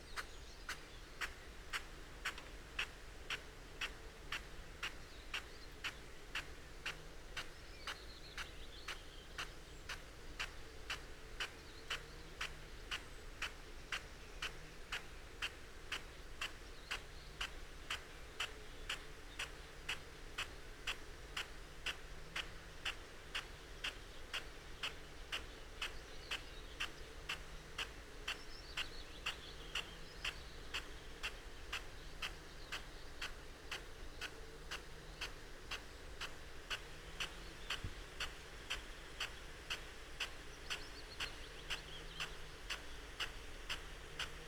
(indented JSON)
{
  "title": "Croome Dale Ln, Malton, UK - field irrigation system ...",
  "date": "2020-05-20 06:35:00",
  "description": "field irrigation system ... parabolic ... a Bauer SR 140 ultra sprinkler to a Bauer Rainstart E irrigation unit ... bless ...",
  "latitude": "54.11",
  "longitude": "-0.55",
  "altitude": "85",
  "timezone": "Europe/London"
}